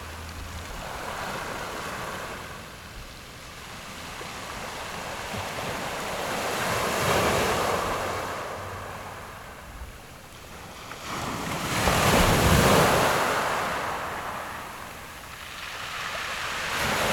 內埤灣, 南方澳, Su'ao Township - Sound of the waves
Sound of the waves, At the beach
Zoom H6 MS+ Rode NT4